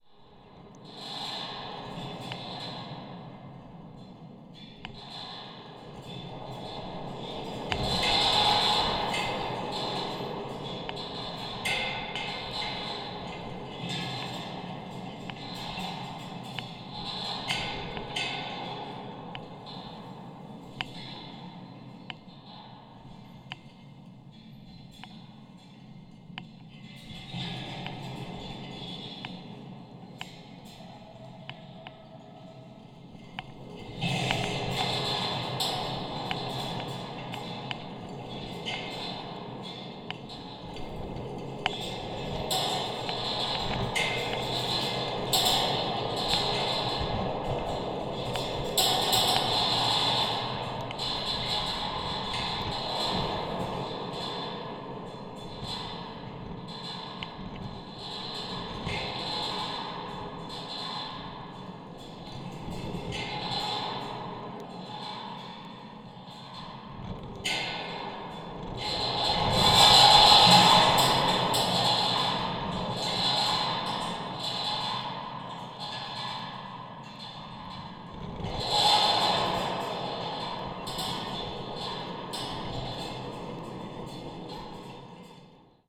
Salida al puerto con materiales un día de tormenta - contact microphone
1 April 2013, 12:33